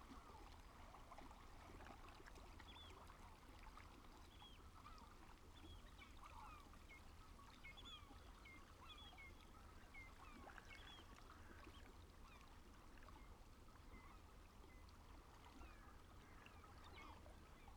ENVOL CANARDS BALLET DE MOUETTES, Sortland, Norvège - ENVOL CANARD ET BALAIE DE MOUETTES
La beauté de cet envol de canard ce matin après le levé de soleil aux Vesteralen.... Puis 2 Mouettes m'int enchanté les oreilles dans une chorégraphie magnifique. Et enfin un petit seau est venu exiger l'acoustique du ce bord de mer boisé..... J'ai adoré
Nordland, Norge